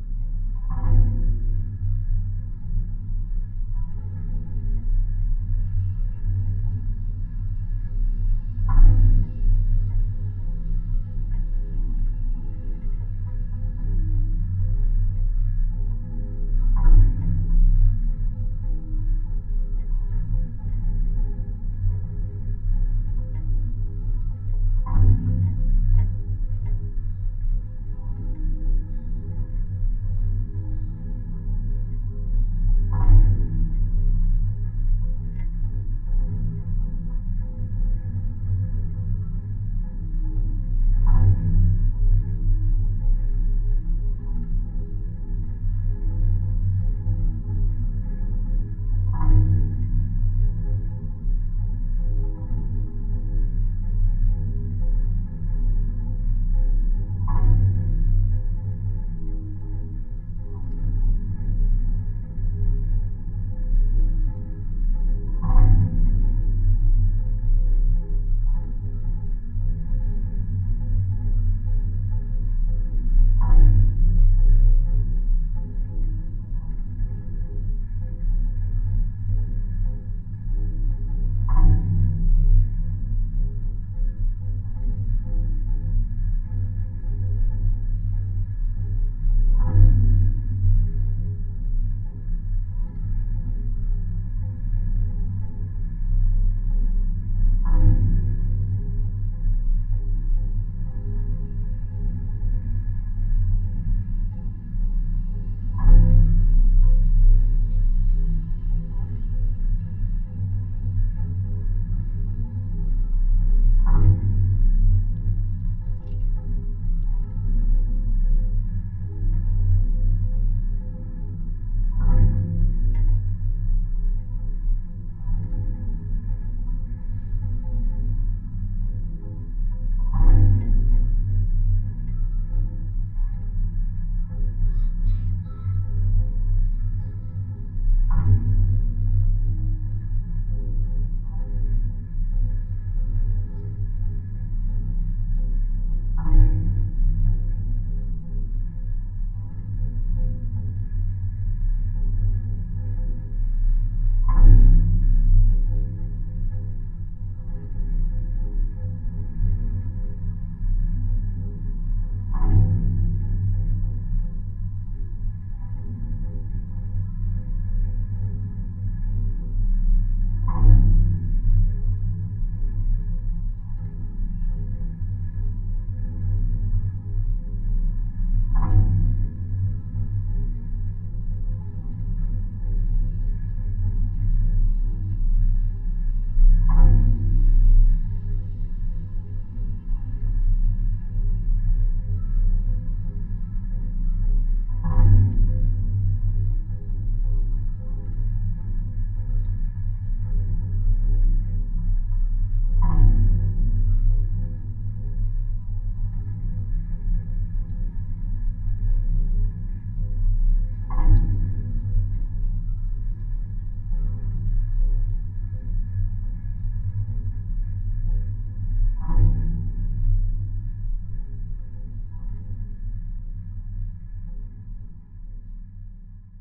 contact microphones and geophone on a metallic pole holding the construction of wake inn cables